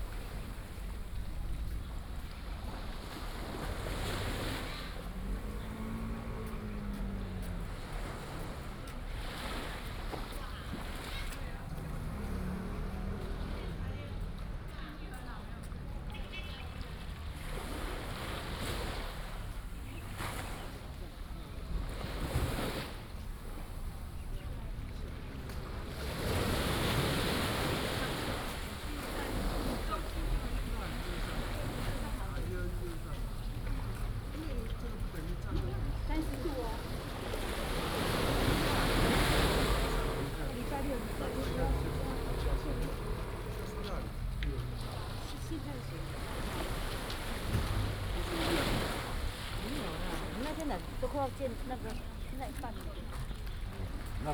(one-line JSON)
{"title": "榕堤, Tamsui Dist., New Taipei City - Next to the coast", "date": "2016-03-14 15:55:00", "description": "Next to the coast, Tide, Tourist", "latitude": "25.17", "longitude": "121.44", "altitude": "7", "timezone": "Asia/Taipei"}